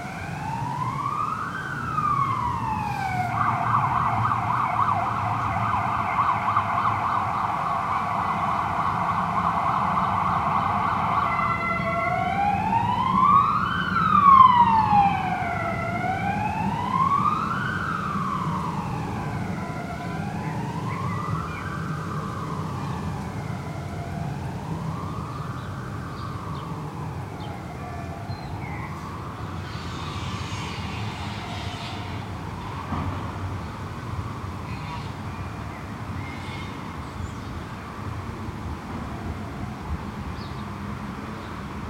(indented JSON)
{
  "title": "Rue de lEtoile, Uccle, Belgique - cars are back 2",
  "date": "2020-04-14 11:10:00",
  "description": "cars are back and corona is not finished",
  "latitude": "50.80",
  "longitude": "4.32",
  "altitude": "27",
  "timezone": "Europe/Brussels"
}